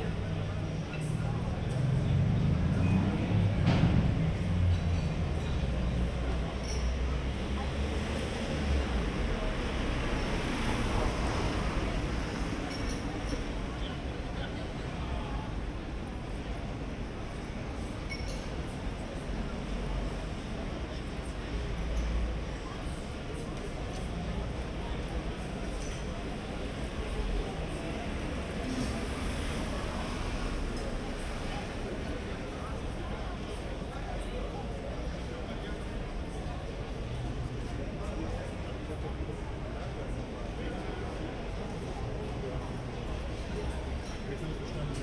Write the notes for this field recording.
City street, summer night, people talking, car traffic, cafés, and some people having their (most probably unauthorised) private fireworks. Recorded with Zoom H3-VR, converted to Binaural - use headphones.